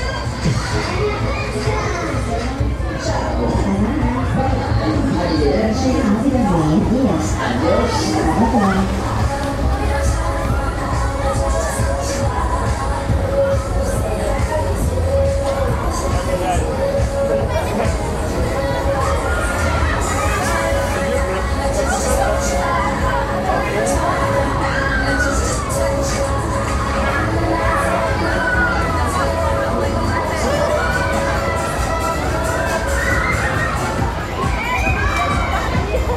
Kermesse, Amay
Parish fair at Amay, near Liège, Belgium. Zoom H2, front channels of a 4-channel recording.
Belgium